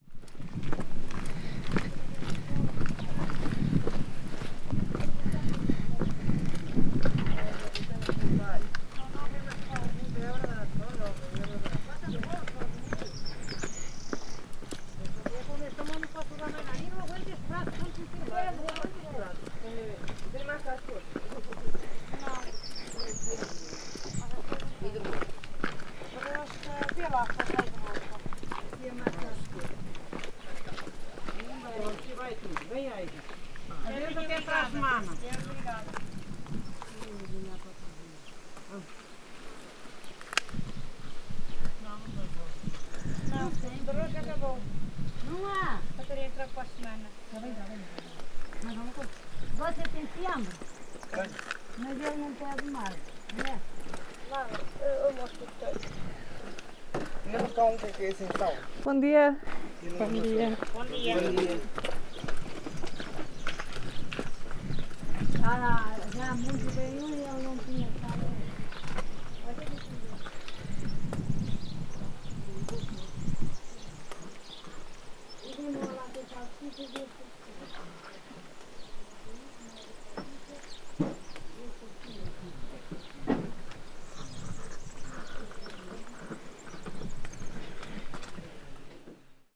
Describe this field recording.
walk through the village with binaural microphones, from time to time manipulating objects. recorded together with Ginte Zulyte. Elke wearing in ear microphones, Ginte listening through headphones.